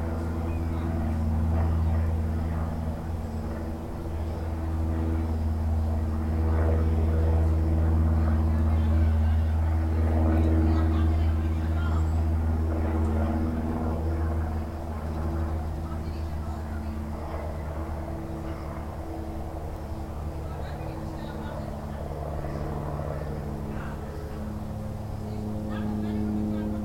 Gavassa, Ca Azzarri
WDL, Gavassa, Tenuta Ruozzi, Reggio Emilia, Emilia Romagna, Italy, Aerodrome, plane, noise